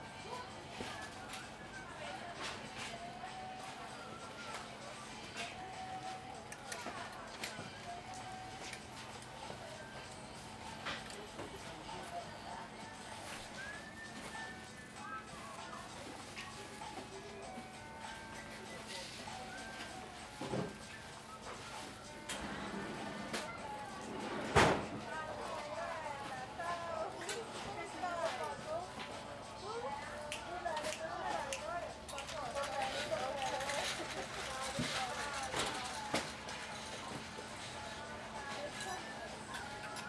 L'Aquila, Piazza d'Armi - 2017-05-22 03-Mercato pzza d'Armi